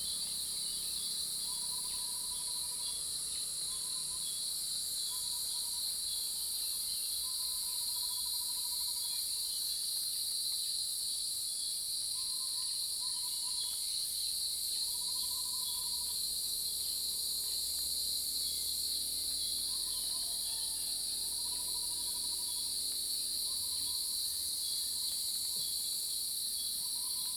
草湳溼地, 埔里鎮桃米里, Taiwan - Sound of insects
early morning, Sound of insects, birds sound
Zoom H2n MS+XY
Puli Township, Nantou County, Taiwan, 13 July 2016, ~05:00